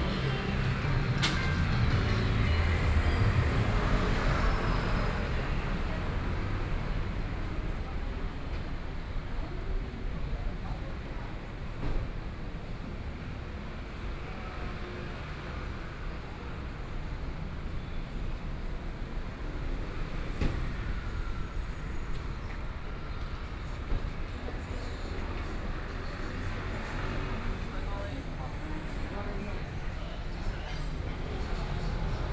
walking on the Road, Traffic Sound, Various shops voices
Sony PCM D50+ Soundman OKM II
Gushan District, Kaohsiung City, Taiwan, 21 May 2014